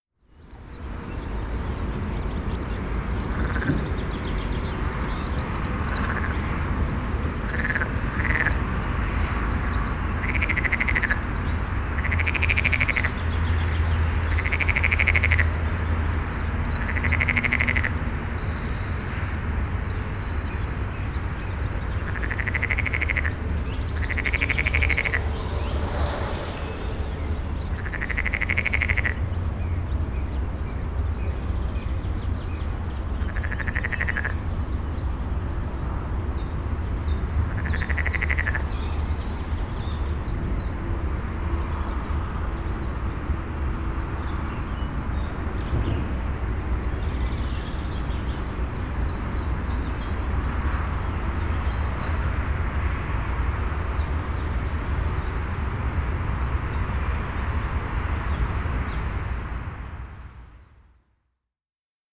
Åkirkebyvej, Rønne, Denmark - Frog and traffic
A frog singing next to busy road. A bicycle is passing next to the recorder.
Une grenouille chante près d’une route fréquentée. Un cycliste passe près de l’enregistreur